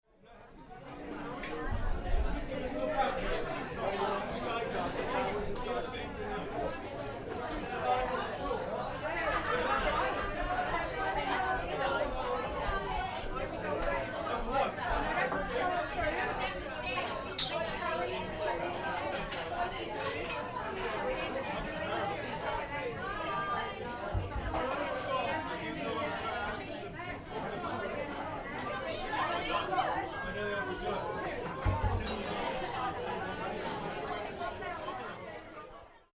The Cove Inn Public House Portland Dorset UK